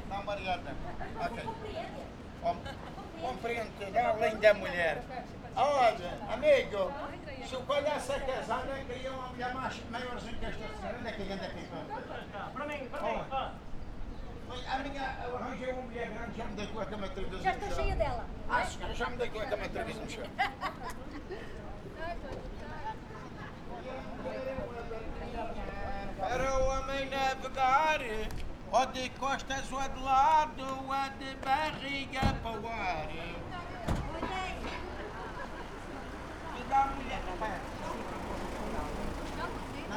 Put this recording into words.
taxi driver showing off the trunk of his car - decorated with pictures, badges, pendants and other stuff.